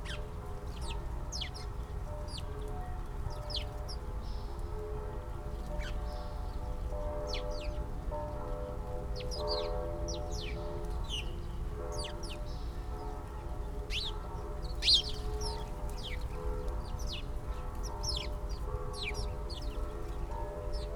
fluttering sparrows in a rose hip bush, Sunday churchbells, a sound system in the distance
(Sony PCM D50, DPA4060)
Berlin, Germany